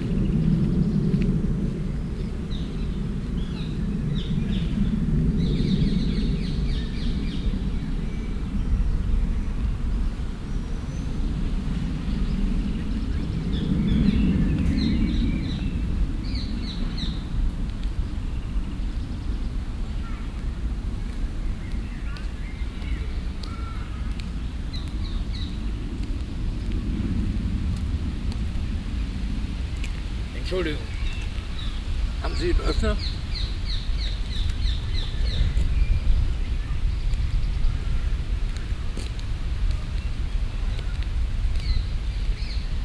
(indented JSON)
{
  "title": "cologne, stadtgarten, mittlerer weg, parkbank",
  "date": "2008-05-07 21:25:00",
  "description": "stereofeldaufnahmen im mai 08 - mittags\nproject: klang raum garten/ sound in public spaces - in & outdoor nearfield recordings",
  "latitude": "50.94",
  "longitude": "6.94",
  "altitude": "52",
  "timezone": "Europe/Berlin"
}